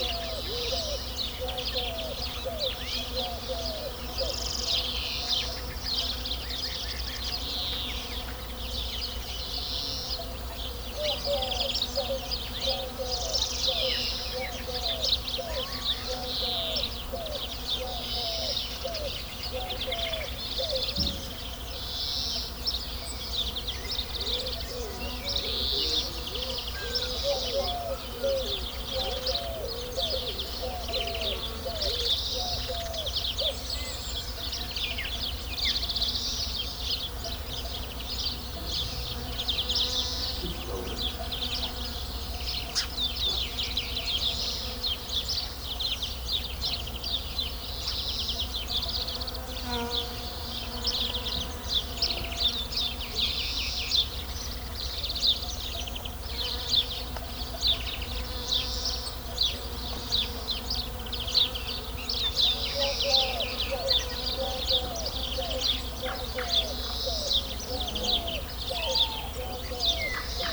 Morille, Spain - Birds at daybreak
Morille (salamanca, ES) Countryside birds, daybreak, mono, rode NTG3, Fostex FR2 LE